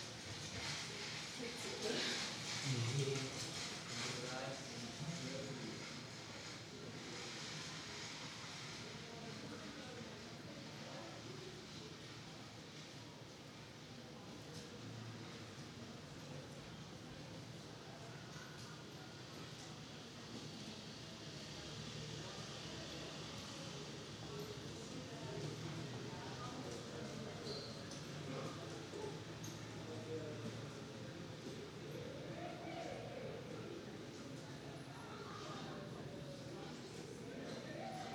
Münzgasse, Tübingen - Münzgasse, Tübingen 3
Mittelalterliche Gasse mit Fachwerkhäusern, Fußgängerzone.
ein Chor übt, Fußgänger...
a choir is practicing, pedestrians...
(Tascam DR-100MX3, EM172 (XLR) binaural)